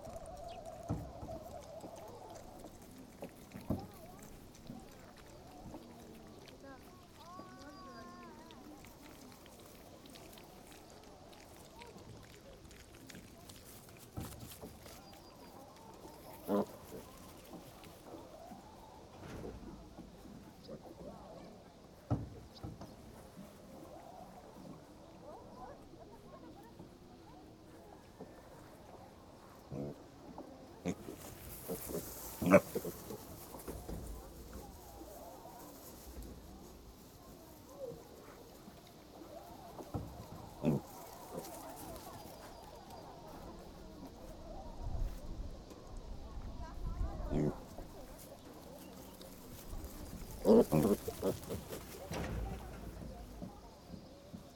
Võnnu Parish, Tartu County, Estonia - Animal farm, small pigs
visiting the animal farm with the kids
Mäksa vald, Tartu maakond, Eesti, 31 May 2013, ~12:00